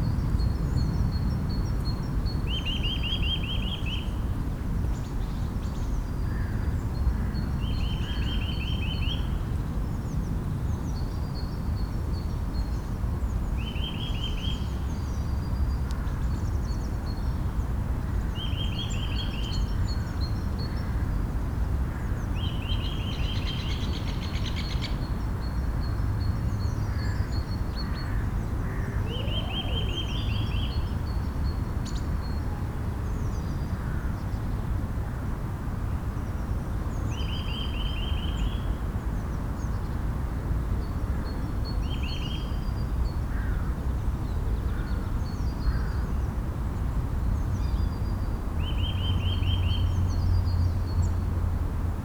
berlin, argentinische allee: haus am waldsee, skulpturengarten - the city, the country & me: haus am waldsee, sculpture garden
the city, the country & me: february 3, 2013
Deutschland, European Union, 3 February 2013